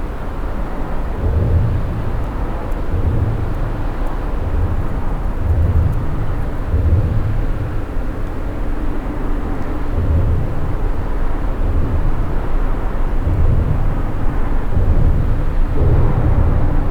Wesel, Deutschland - Wesel, underneath rhine bridge
Underneath the Rhine bridge at Wesel. The sounds of cars crossing the bridge and resonating in the big metall bridge architecture. Second recording with wind protection.
soundmap d - social ambiences and topographic field recordings